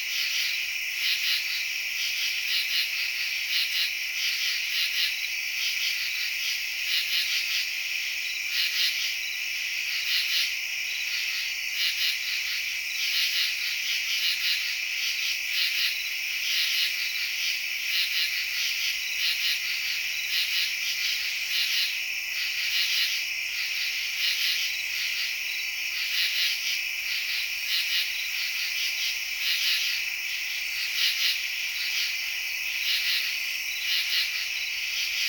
Tanglewood Ln, Cincinnati, OH, USA - Urban Katydids
Midnight orthopteran chorus and neighborhood sounds